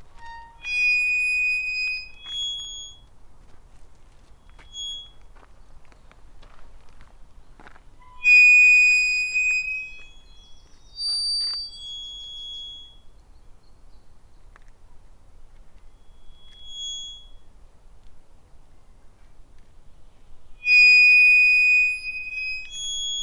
Yorkshire Sculpture Park - Squeaky Gate in Yorkshire
A wonderful squeaky gate...
22 May 2010, ~13:00